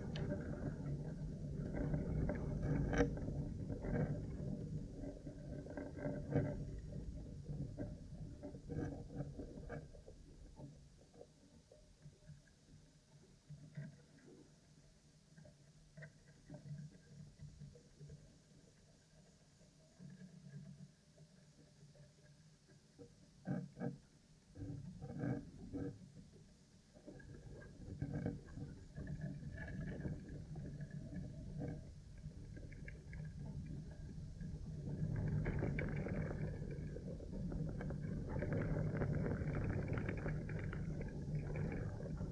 Indian Camp Creek Park, Foristell, Missouri, USA - Indian Camp Creek Fence
Contact mic recording from a wood plank fence that sits in a clearing capturing the sound of vegetation scraping its surface when the wind gusts
11 September 2021, ~15:00